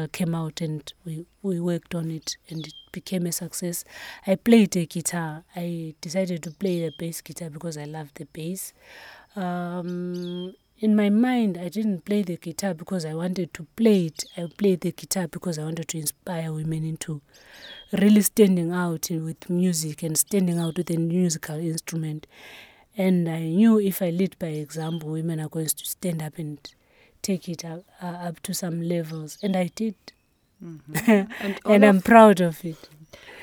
Lupane, Zimbabwe - “I like to develop something from nothing…”
We are sitting with Thembi in the shade of her new home, while a merciless midday-sun is burning over the quiet Lupane bush-land… our brief recording is a follow up on a longer interview, we recorded almost exactly a year ago, 29 Oct 2012, when Thembi was still based at Amakhosi Cultural Centre. “I like to develop something from nothing…” she tells us looking at her present homestead and the work she imagines to do here. She wants to continue sharing her skills and knowledge of the African dances with the women and children in this rural district of Zimbabwe, beginning with the pupils in local Primary Schools. Her aim is to set up a cultural centre here in the bush of Lupane…
26 October 2013, 12:30